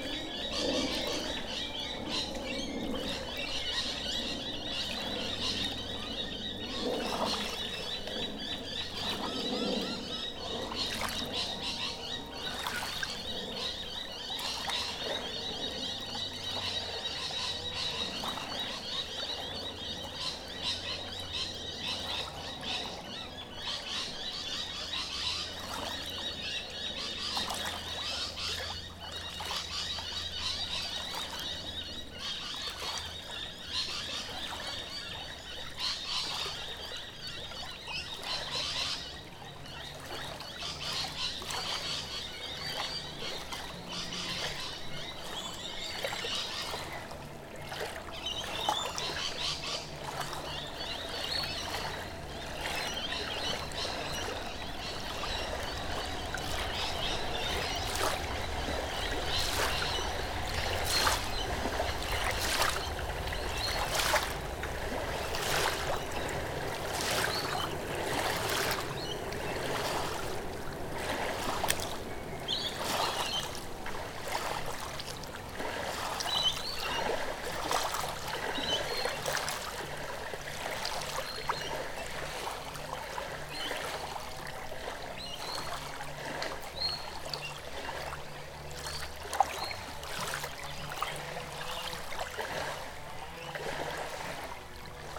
Waves softly lap, people trim their lawns in the distance, motorboats rush across the water, Rainbow Lorikeets and Noisy Miners call in the surrounding trees, someone listens to music in their garage, trains drone from above.
Recorded with a pair of AT4022's placed on a log + Tascam DR-680.
Oatley NSW, Australia, July 2015